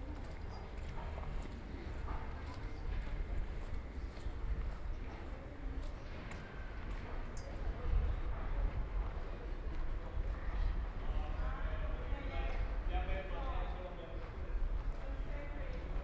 Ascolto il tuo cuore, città. I listen to your heart, city. Several chapters **SCROLL DOWN FOR ALL RECORDINGS** - Short walk and paper mail in the time of COVID19 Soundwalk
Chapter XLI of Ascolto il tuo cuore, città. I listen to your heart, city
Saturday April 11th 2020. Short walk to Tabaccheria to buy stamp and send a paper mail to France, San Salvario district Turin, thirty two days after emergency disposition due to the epidemic of COVID19.
Start at 5:46 p.m. end at 6:00 p.m. duration of recording 14’08”
The entire path is associated with a synchronized GPS track recorded in the (kmz, kml, gpx) files downloadable here: